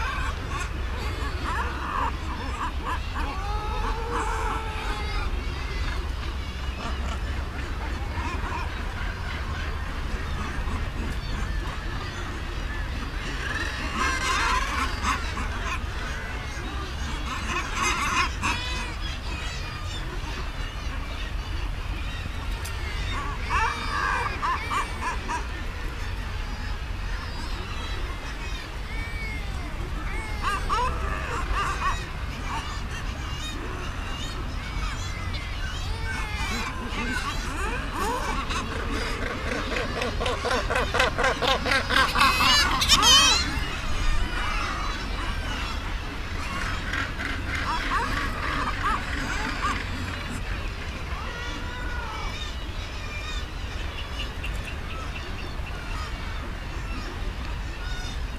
East Riding of Yorkshire, UK - Guillemots ... mostly ...
Guillemots ... mostly ... guillemots calling on the ledges of RSPB Bempton Cliffs ... bird calls from gannet ... kittiwake ... razorbill ... lavalier mics on a T bar fastened to a fishing landing net pole ... some windblast and background noise ...
24 May, ~06:00